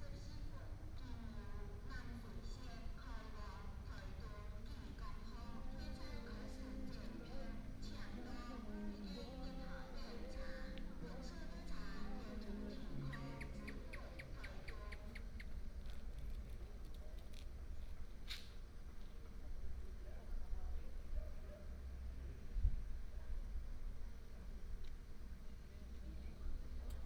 站前路, Taimali Township - Square outside the station
Square outside the station, Station Message Broadcast, Traffic sound, gecko, Dog barking, People walking in the square, birds sound
Taitung County, Taimali Township, 站前路2號, 15 March 2018